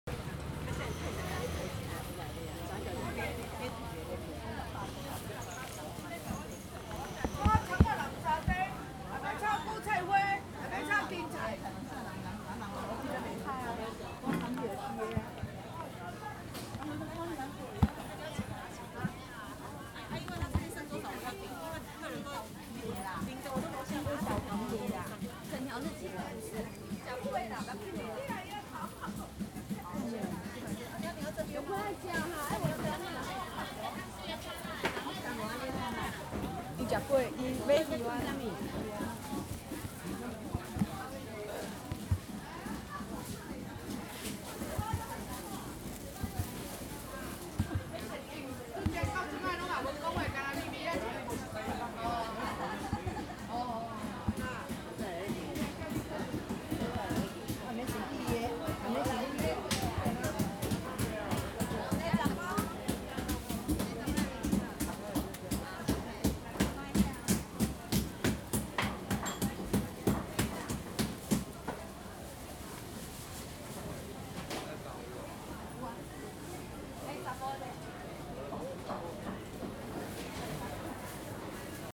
Vender greeting and butcher beating the pork. 攤販招呼與屠夫敲擊肉片